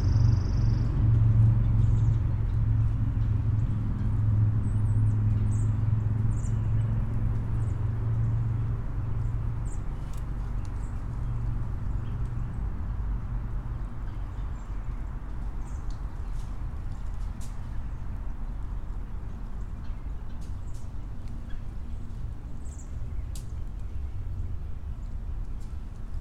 Pendergrast Park, Chrysler Dr NE, Atlanta, GA, USA - Small Wooded Trail
A tiny neighborhood park with a dog trail. This recording was taken in the woods near the end of the trail. Lots of birds are heard in this recording. Airplanes and traffic in the background are also present. Plant matter can be heard dropping from the trees as the birds fly by.
[Tascam DR-100mkiii & Primo EM-272 omni mics w/ improvised jecklin disk]